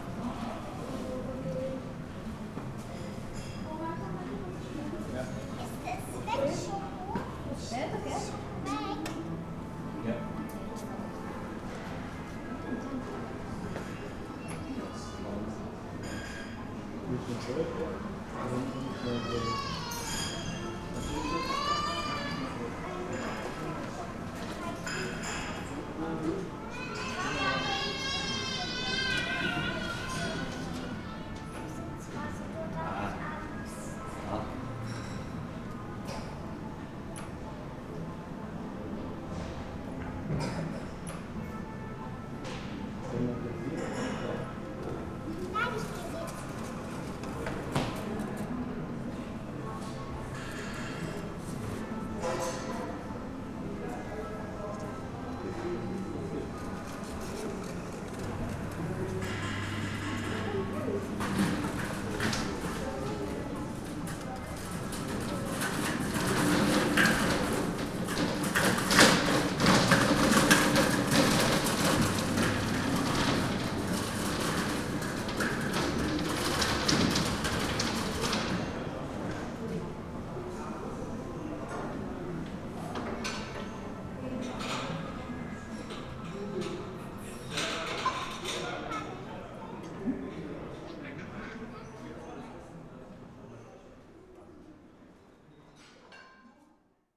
mainz, templerstraße: hotel - the city, the country & me: hotel foyer
the city, the country & me: may 7, 2016